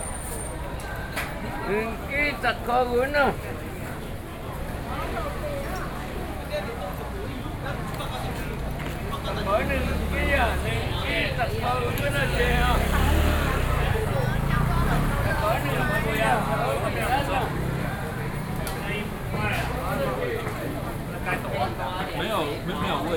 延平北路二段, Datong District, Taipei City - Traditional markets
Taipei City, Taiwan, 4 November, 08:42